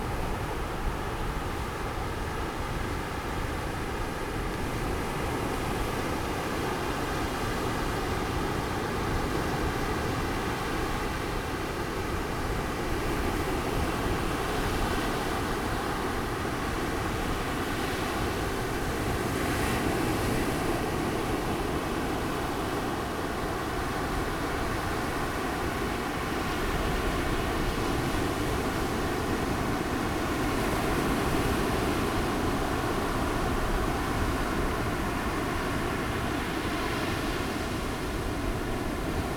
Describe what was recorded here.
Sound of the waves, On the beach, Zoom H2n MS+XY